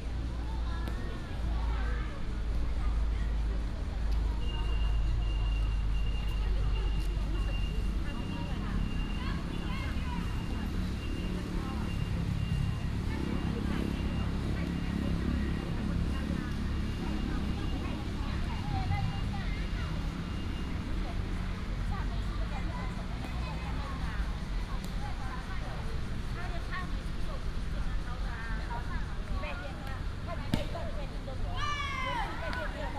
夢幻公園, Zhongli Dist., Taoyuan City - in the park
in the park, Children, Cicada cry, traffic sound, Binaural recordings, Sony PCM D100+ Soundman OKM II